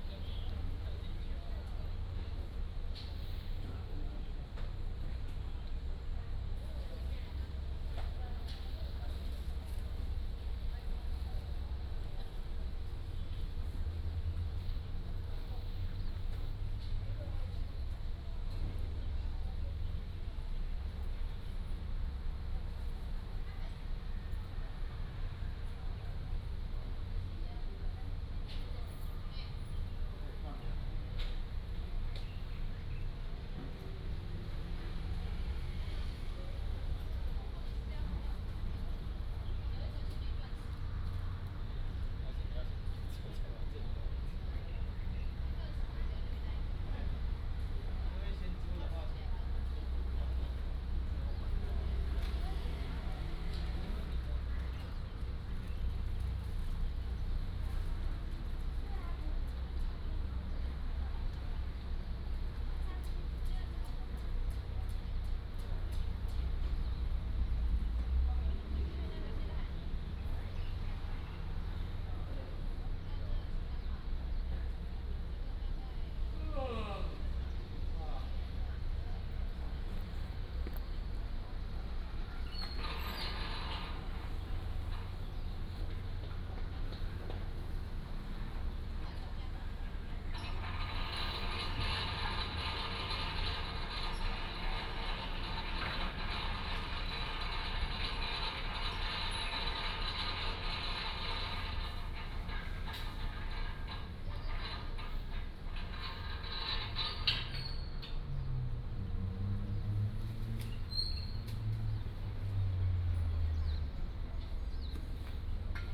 In the square outside the station